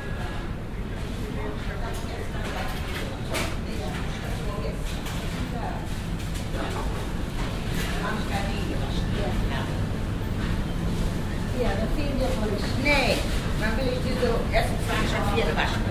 erkrath, einkaufszentrum, city center
lüftungsdröhnen, stimmen, schritte, durchsagen, morgens
project: social ambiences/ listen to the people - in & outdoor nearfield recordings